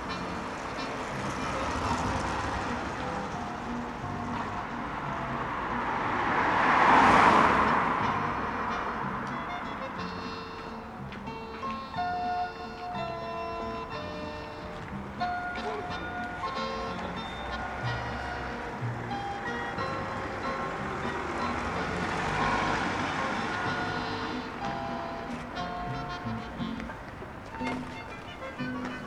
Tallinn, Inseneri
music, cars, Inseneri street
Tallinn, Estonia, 19 April 2011, 15:33